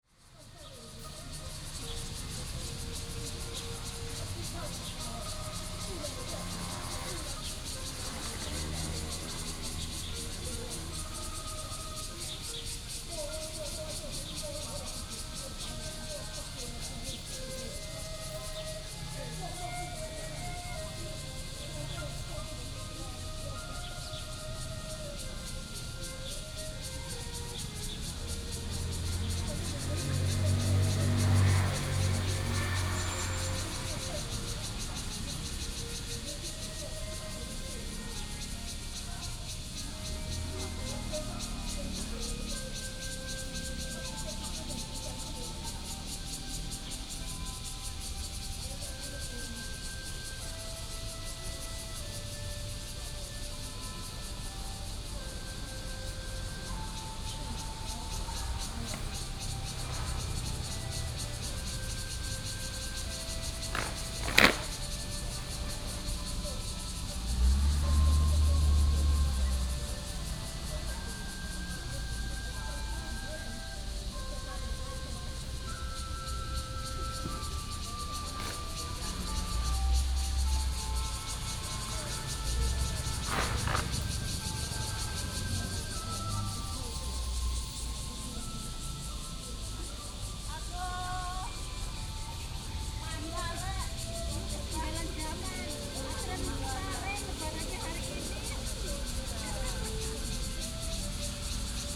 黎孝公園, Da’an Dist., Taipei City - Morning in the park
Group of elderly people doing aerobics, Falun Gong, Bird calls, Cicadas cry